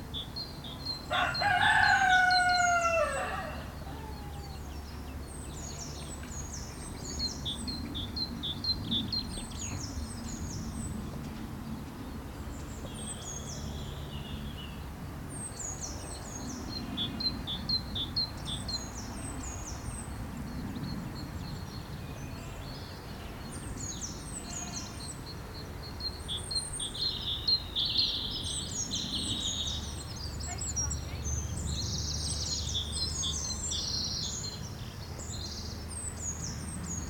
Shillingstone, Dorset, UK - Biplane and farmyard animals.
Farmyard ambiance in early spring.
Blandford Forum, Dorset, UK, 3 April